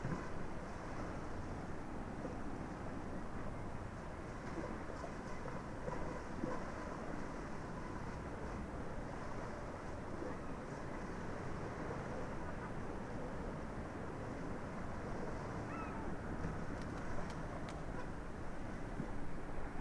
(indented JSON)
{
  "title": "Estrada Gaspar Corte-Real, Angra do Heroísmo, Portugal - Clube Nautico",
  "date": "2019-11-08 11:27:00",
  "description": "These recordings are part of the Linschoten Workshop, a work done with the students of the Francisco Drummond school of eighth year.\nA sound landscape workshop with which a mapping has been made walking the city of Angra do Heroísmo, a world heritage site, through the Linschoten map, a map of the XVi century, which draws the Renaissance city. With the field recordings an experimental concert of sound landscapes was held for the commemorations of UNESCO. 2019. The tour visits the city center of Angra. Jardim Duque da Terceira, Praça Velha, Rua Direita, Rua São João, Alfandega, Prainha, Clube Náutico, Igreja da Sé, Igreja dos Sinos, Praça Alto das Covas, Mercado do Duque de Bragança-Peixeria.\nRecorded with Zoom Hn4pro",
  "latitude": "38.65",
  "longitude": "-27.22",
  "altitude": "6",
  "timezone": "Atlantic/Azores"
}